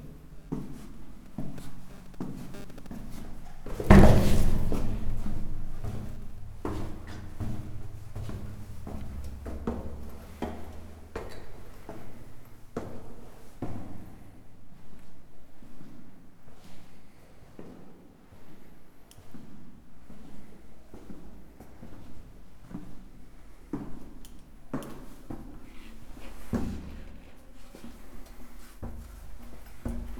de Septiembre, Centro, León, Gto., Mexico - Caminando por las criptas del templo expiatorio.
Walking through the crypts of the expiatorio temple.
Going down from the stairs at the entrance of the crypts and walking through its corridors trying to avoid the few people that were there that day.
*I think some electrical installations caused some interference.
I made this recording on March 29th, 2022, at 5:46 p.m.
I used a Tascam DR-05X with its built-in microphones.
Original Recording:
Type: Stereo
Bajando desde las escaleras de la entrada de las criptas y caminando por sus pasillos tratando de evitar la poca gente que había ese día.
*Creo que algunas instalaciones eléctricas causaron algunas interferencias.
Esta grabación la hice el 29 de marzo de 2022 a las 17:46 horas.
Usé un Tascam DR-05X con sus micrófonos incorporados.